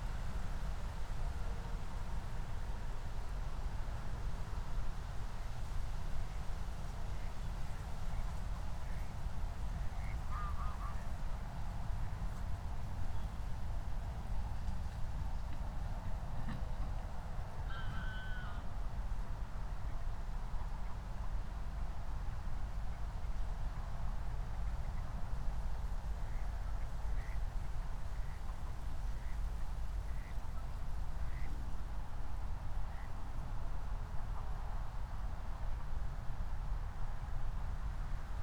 {"title": "Moorlinse, Berlin Buch - near the pond, ambience", "date": "2020-12-24 07:19:00", "description": "07:19 Moorlinse, Berlin Buch", "latitude": "52.64", "longitude": "13.49", "altitude": "50", "timezone": "Europe/Berlin"}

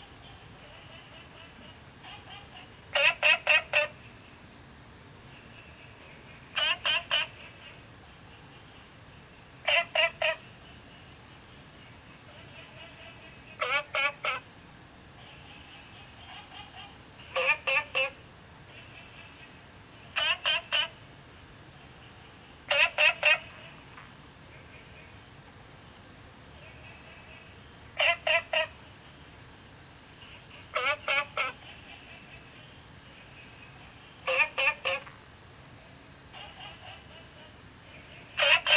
{"title": "青蛙ㄚ 婆的家, 埔里鎮桃米里 - Small ecological pool", "date": "2015-08-11 21:26:00", "description": "Frogs chirping, Insects sounds, Small ecological pool\nZoom H2n MS+ XY", "latitude": "23.94", "longitude": "120.94", "altitude": "463", "timezone": "Asia/Taipei"}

